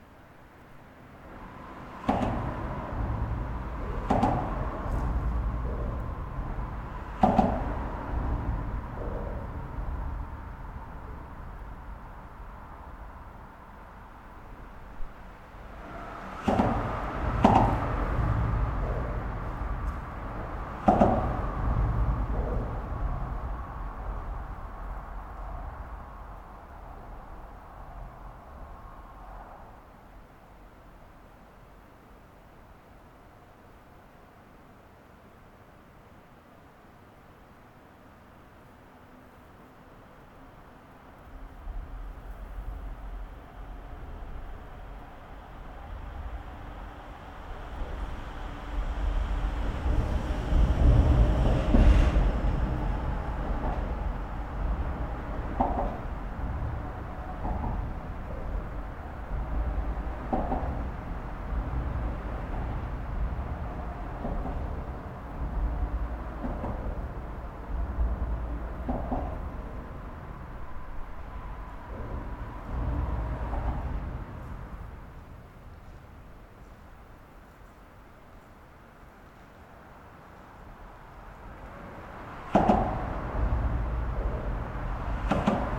Garrison, NY, USA - Under the Route 9D Bridge
Sounds of traffic under the Route 9D bridge.